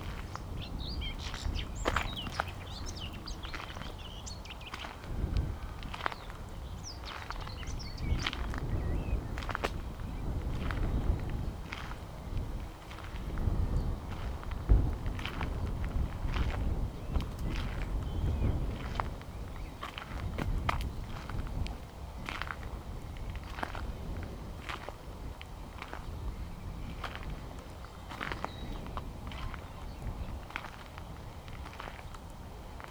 Bradford Peverell, Dorset, UK - Walking Meditation
A short walking meditation ending at 'Being Peace' cottage, New Barn Field Centre, near Dorchester whilst on a weekend retreat. Wind noise intentionally recorded to add to the sense of place. Binaural recording using a matched pair of Naiant X-X microphones attached to headphones.